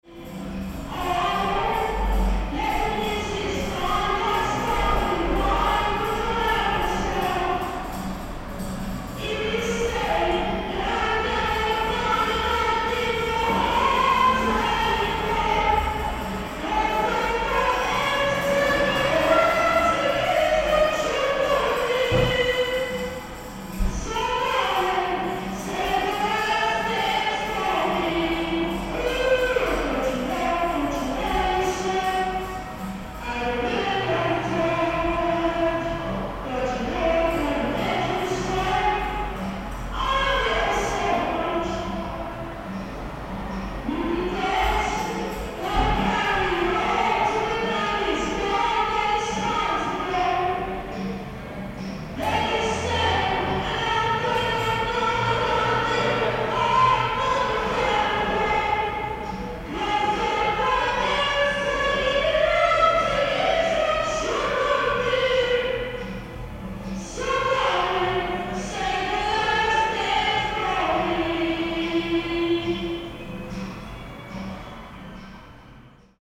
Reading, UK - Whitley Special Needs Music
Recording of a special needs music group enjoying karaoke and enthusiastically playing along with percussion. Made whilst working with local people to engage with the sounds of the local environment in Whitley. Recorded from the entrance hall on a Tascam DR-05 using the in-built microphones.
19 April